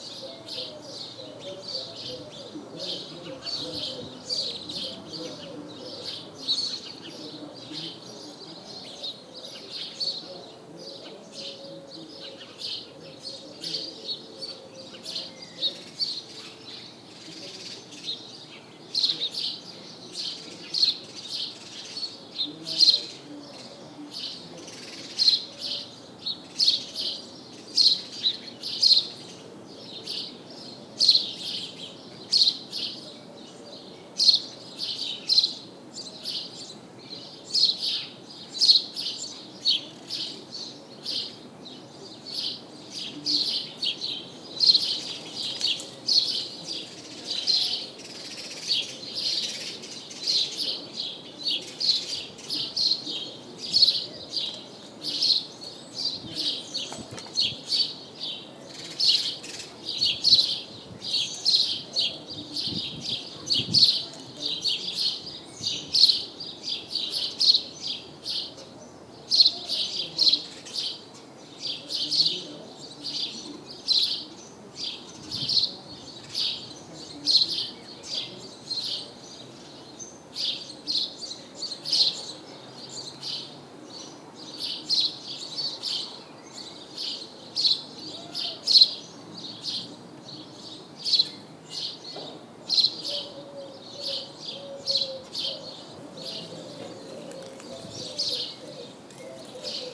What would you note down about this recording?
In the small center of Sainte-Marie-de-Ré, sparrows are singing and trying to seduce. The street is completely overwhelmed by their presence.